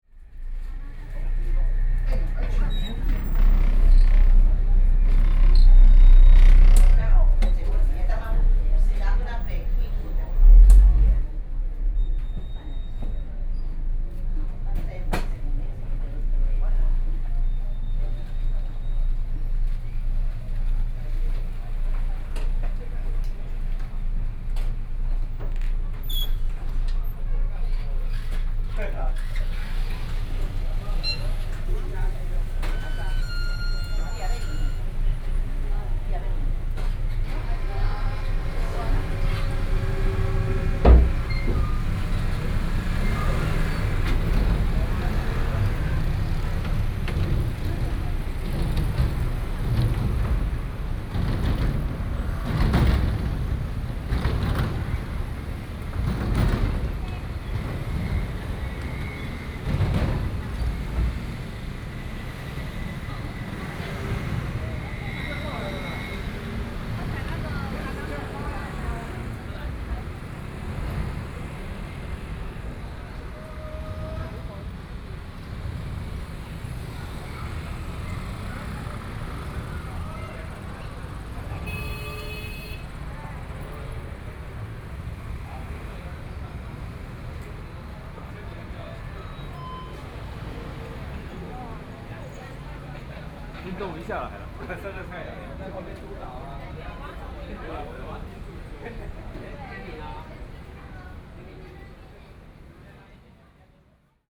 旗津輪渡站, Kaohsiung City - Down from the ferry boat
Down from the ferry boat, Very hot weather, Traffic Sound